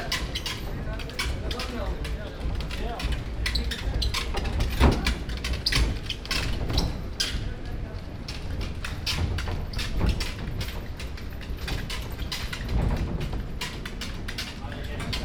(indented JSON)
{
  "title": "Fatih/Istanbul, Turkey - Wood Carrier",
  "date": "2008-06-12 13:20:00",
  "description": "Man transporting wood on cart.Voices. Binaural recording, DPA mics.",
  "latitude": "41.02",
  "longitude": "28.97",
  "altitude": "20",
  "timezone": "Europe/Istanbul"
}